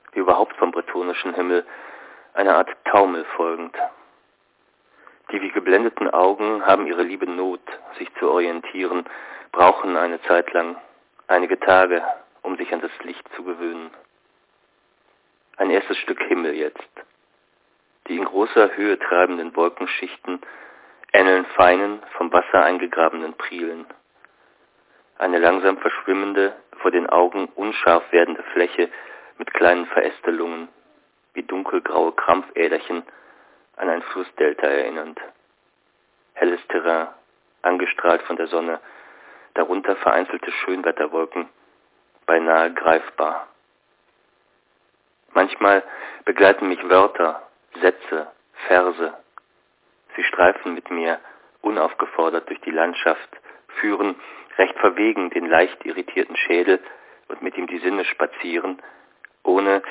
himmel/worte/land - himmel worte land (1) - hsch ::: 08.05.2007 12:38:56
France